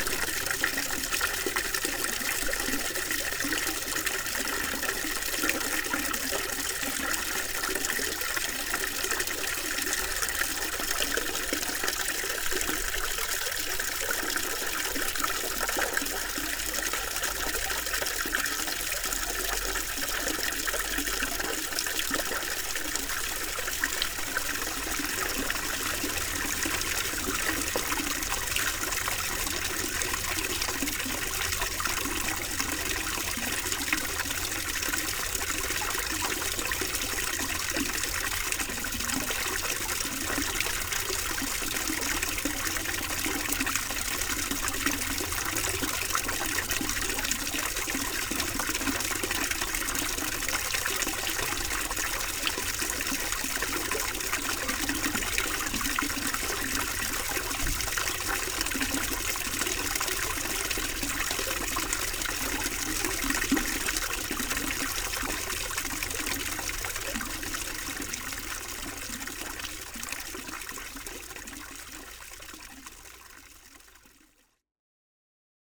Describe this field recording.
A small fountain in the center of the Hévillers village.